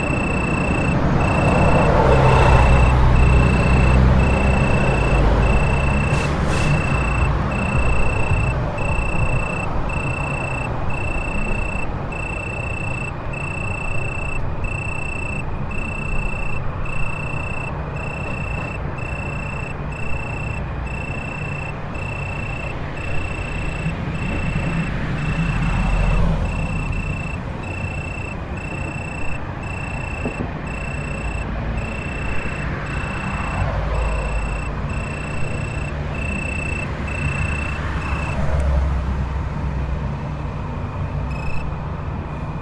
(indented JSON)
{
  "title": "Hořejší nábřeží, Chirping Smíchov",
  "date": "2008-09-05 13:10:00",
  "description": "Smichov is full of cars all the time. There are two big arteries of traffic with thousands cars just passing through. Smichov is literally enclosed by cars and traffic noise. When I use to go to the Smichov’s riverside, close to the Railway Bridge, I have to pass one of the traffic corridors. There is a small\natural beach just next to the road. I’ve recorded chirping of small cricket, compeeting in the bushes with the sound of cars passing by, just one meter from there.",
  "latitude": "50.07",
  "longitude": "14.41",
  "altitude": "190",
  "timezone": "Europe/Prague"
}